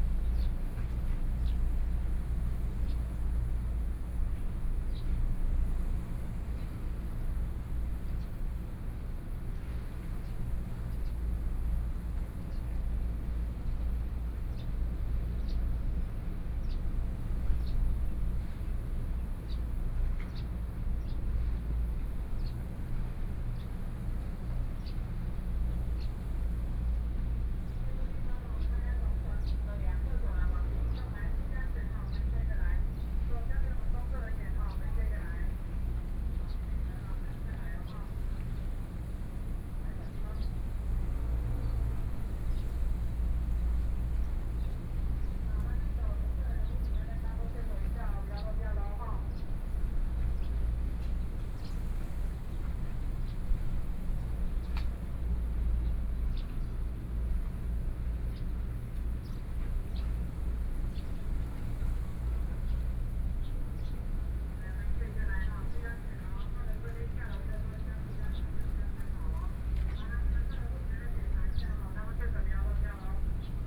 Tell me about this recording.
Traffic Sound, Birdsong, In the nearby marina, Hot weather